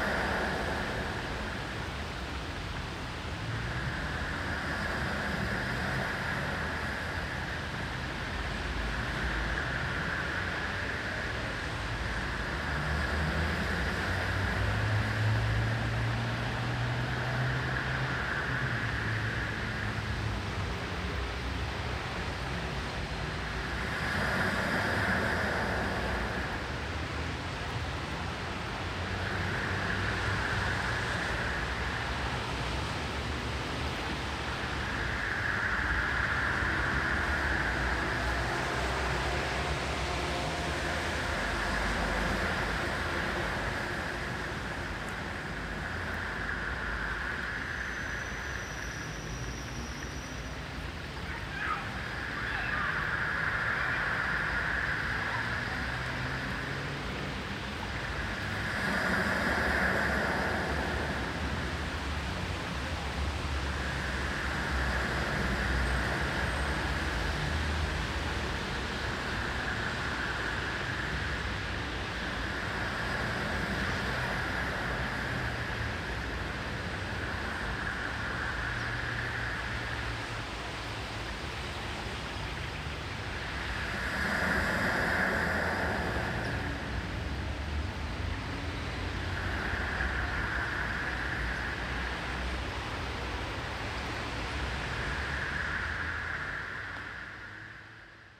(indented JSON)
{
  "title": "Nauener Platz, Wedding, Berlin, Deutschland - 2013-01-03 Nauener Platz, Berlin - Bench 1 Ocean surf",
  "date": "2013-01-03 15:03:00",
  "description": "Nauener Platz in Berlin was recently remodeled and reconstructed by urban planners and acousticians in order to improve its ambiance – with special regard to its sonic properties. One of the outcomes of this project are several “ear benches” with integrated speakers to listen to ocean surf or birdsong.",
  "latitude": "52.55",
  "longitude": "13.37",
  "altitude": "41",
  "timezone": "Europe/Berlin"
}